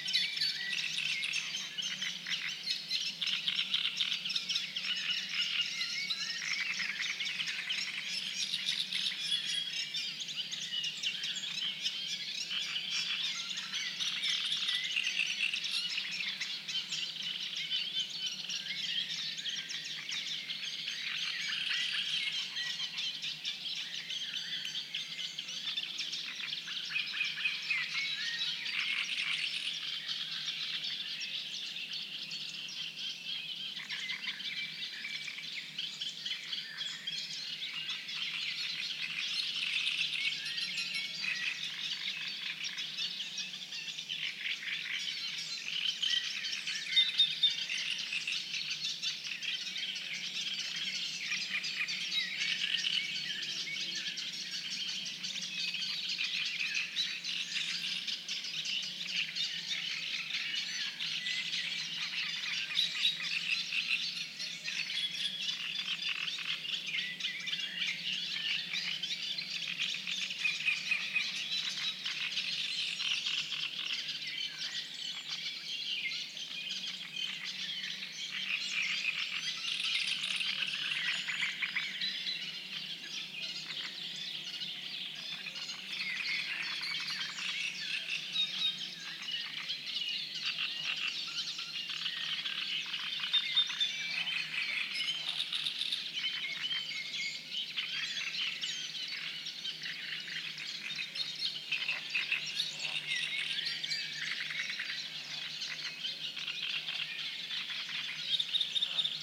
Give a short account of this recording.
Early morning on a cold May day, 5 a.m. Trojnka springs is a lovely, isolated place in the middle of Puszcza Zielonka (Zielonka Forest) Landscape Park. A place to sleep for many species of waterfowl and a popular waterhole for local animals. In this part of the year hundreds of frogs go through their annual mating rituals making extremely loud noises. The one who will do it the loudest will win the competition.